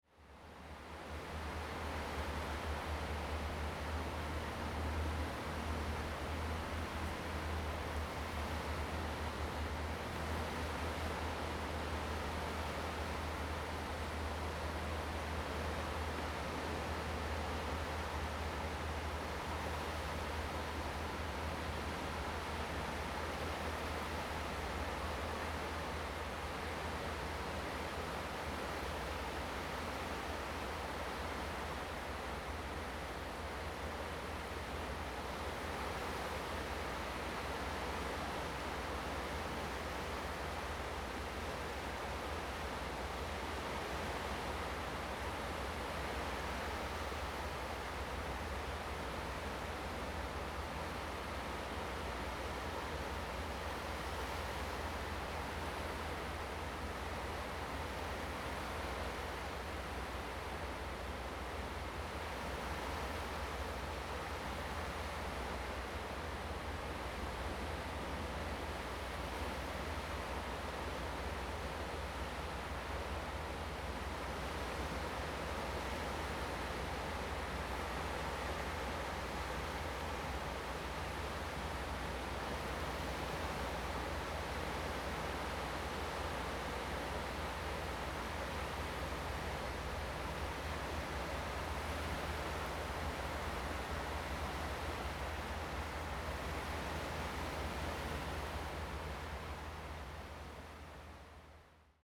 蛤板灣, Hsiao Liouciou Island - At the beach
Sound of the waves, At the beach
Zoom H2n MS +XY